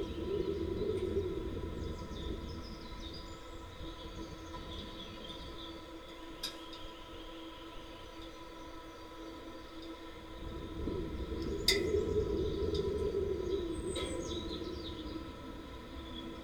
Lithuania, Narkunai, abandoned bridge's railing
contacy microphones placed on abandoned bridge railing